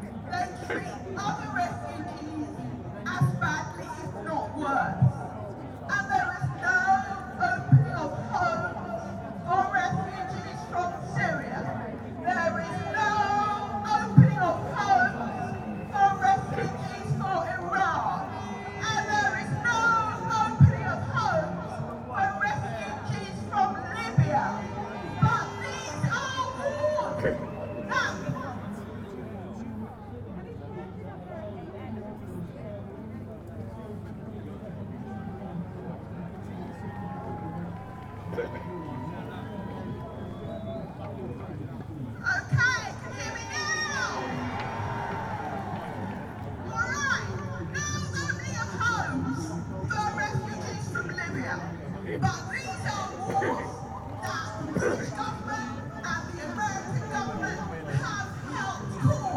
Parliament Square, London, UK - March Against Racism
England, United Kingdom